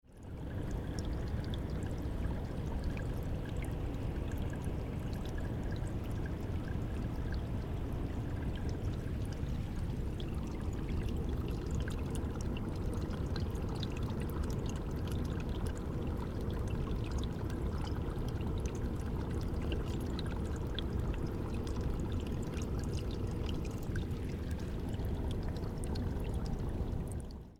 Water: Falls of the Ohio - Falls of the Ohio, Kentucky State Park
Small rock overhang